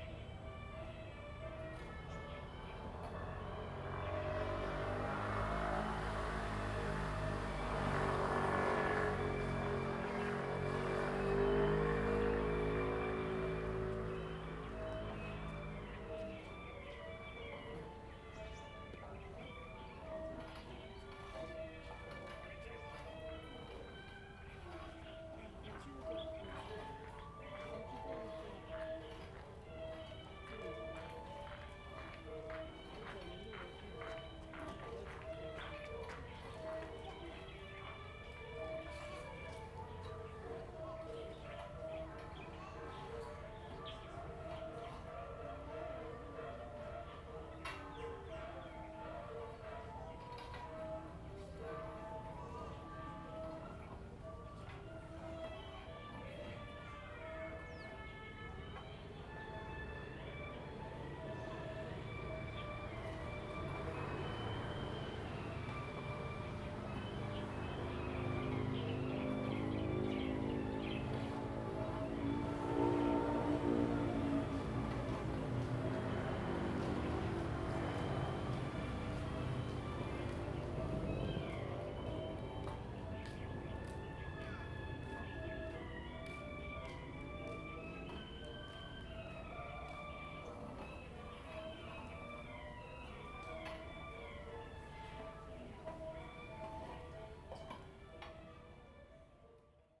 大福村, Hsiao Liouciou Island - In the temple square
In the temple square, Birds singing, Traffic Sound
Zoom H2n MS +XY
Liuqiu Township, Pingtung County, Taiwan, 1 November 2014